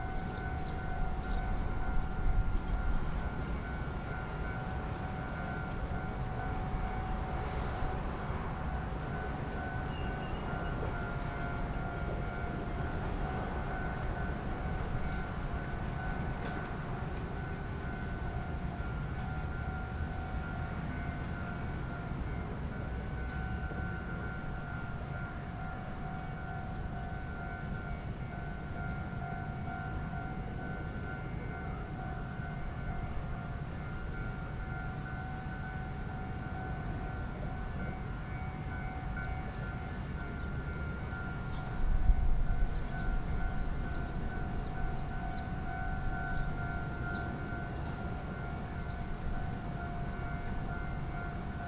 Haibin Rd., Tongxiao Township - Train traveling through
Near the railroad tracks, Train traveling through
Zoom H6 +Rode NT4
Tongxiao Township, Miaoli County, Taiwan, February 2017